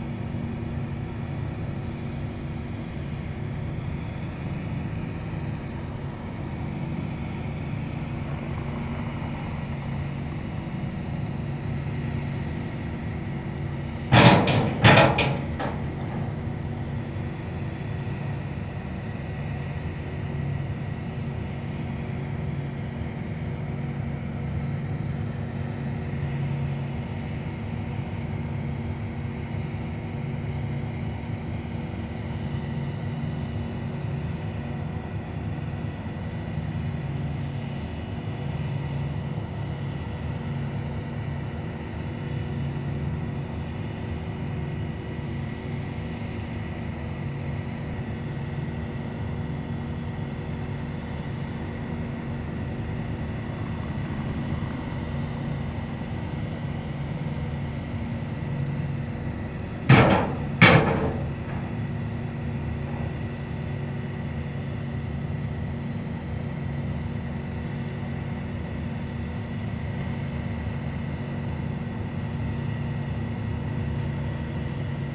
Yainville, France - Yainville ferry
The Yainville ferry, charging cars. At the end, the horses arrive.